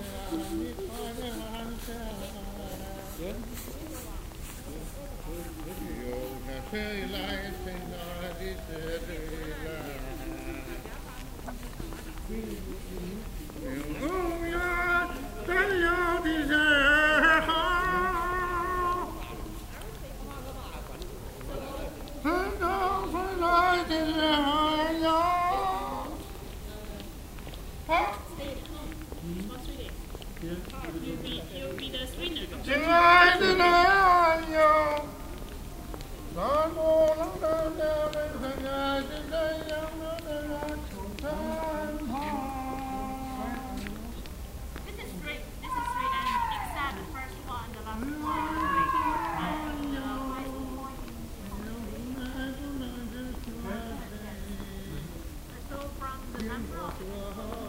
beijing, temple of heaven, mann singt
recorded in the evening nov 07, close afer dawn, in between the two main temple buildings. voices of a man singing and a distant voice repeatively shouting
international city scapes - social ambiences and topographic field recordings